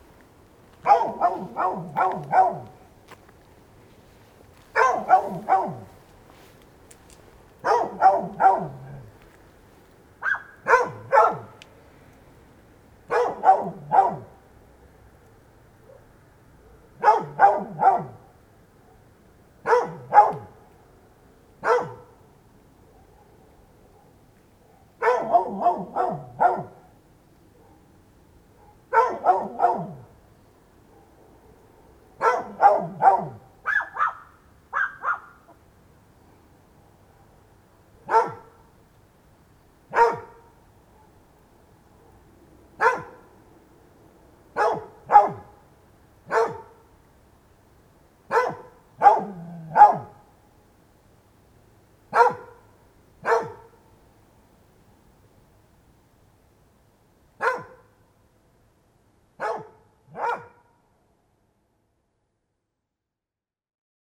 Maintenon, France - Dog barking
Again and again, the dog is barking everytime somebody passes here !